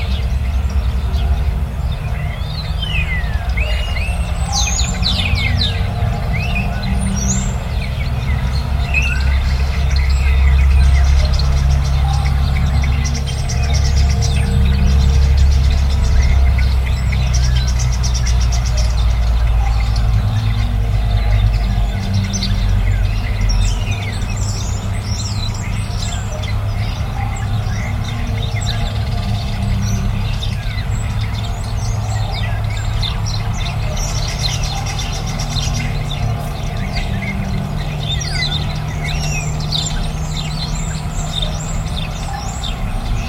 Recorded with a pair of DPA 4060s into a Marantz PMD661
Tarragona, Spain, 23 October 2017, 17:30